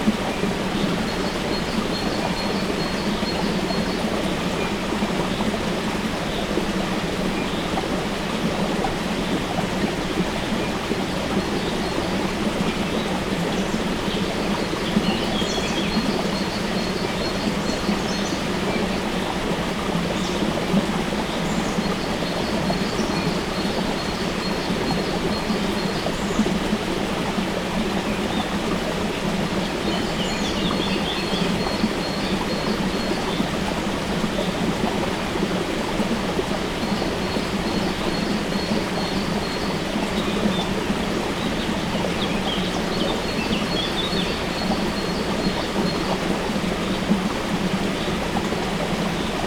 Zatolmin, Tolmin, Slovenia - Source of river Tolminka
Water from source of river Tolminka flowing in a stream, birds
Recorded with ZOOM H5 and LOM Uši Pro, Olson Wing array.